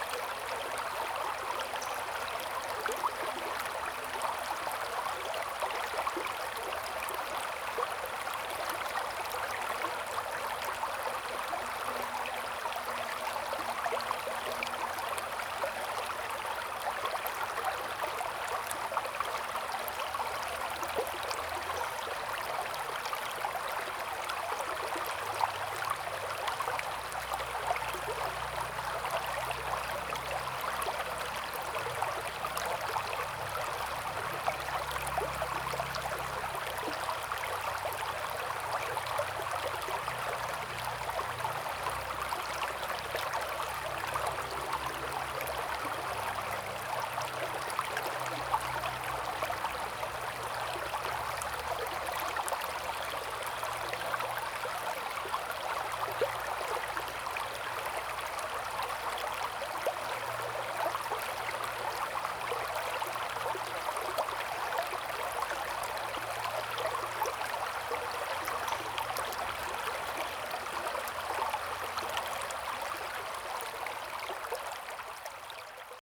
中路坑溪, 桃米里 - Stream and bird sound
Stream and bird sound
Zoom H2n MS+XY